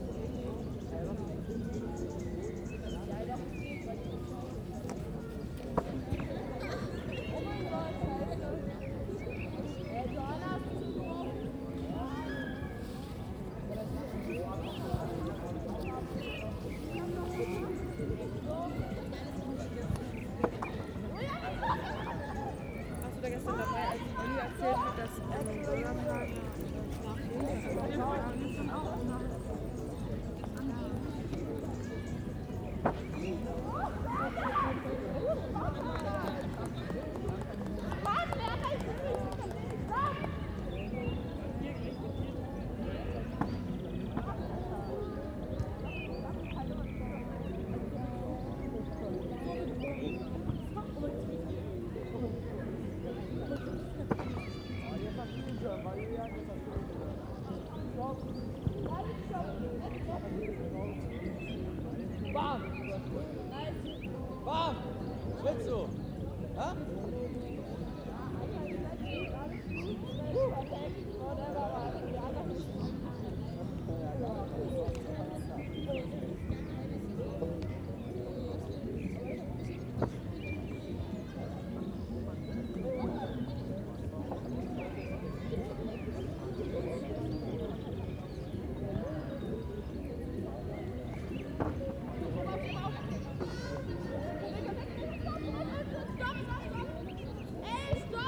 Palace Park, Am Schloßpark, Berlin, Germany - Beautiful sun, closed schools: kids relaxing in the park
Covid-19 has closed Berlin schools and the springtime weather is beautiful. Kids are relaxing in the parks, enjoying the sunshine, sitting around in small and large groups, playing ball games, dancing to musics on their phones, sharing jokes, drinks and maybe even viruses. Amazing how Berliners are so good at turning a crisis into a party.
The pandemic is also having a noticeable effect on the city's soundscape. This spot is directly under the flight path into Tegel airport. Normally planes pass every 3 or 4 minutes. Now it's about 10 minutes. Traffic is less. The improvement in sonic clarity and distance hearing is very pleasant.